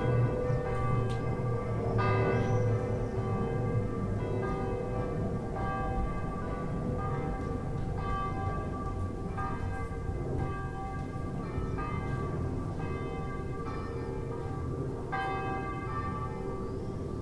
Juan-les-Pins, Antibes, France - Bells and baggage
Just as we arrived in our place at Antibes, all the bells in the locale started to ring. It was just after four, a Saturday afternoon. I was unpacking my stuff, and Mark's boys were doing the same downstairs. I set up the EDIROL R-09 beside an open window, so as to capture a little snippet of the lovely bells. You can just about hear us talking in the background, my suitcase zip, and the swifts (or perhaps swallows, I'm not sure?) circling in the air after the bells have stopped ringing and the sound has completely died away.